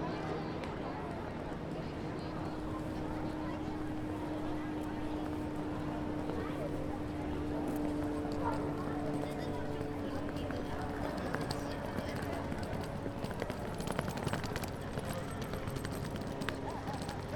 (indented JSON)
{
  "title": "frankfurt, fahrtor, construction work",
  "date": "2011-09-01 09:45:00",
  "description": "from noise to silence",
  "latitude": "50.11",
  "longitude": "8.68",
  "altitude": "105",
  "timezone": "Europe/Berlin"
}